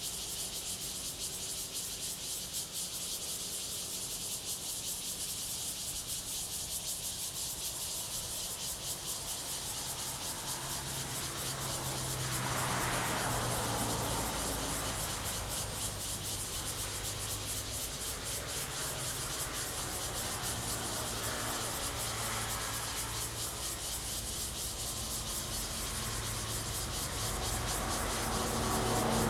北里村, Taimali Township - Cicadas
Cicadas and traffic sound, The weather is very hot
Zoom H2n MS +XY